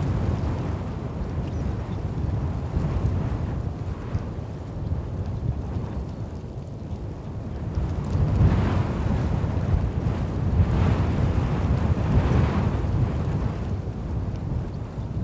Tall Grass Prairie - Wind blowing in the countryside during spring, Tall Grass Prairie, Oklahoma, USA
Wind blowing in a field in Tall Grass Prairie Reserve. Sound recorded by a MS setup Schoeps CCM41+CCM8 Sound Devices 788T recorder with CL8 MS is encoded in STEREO Left-Right recorded in may 2013 in Oklahoma, USA.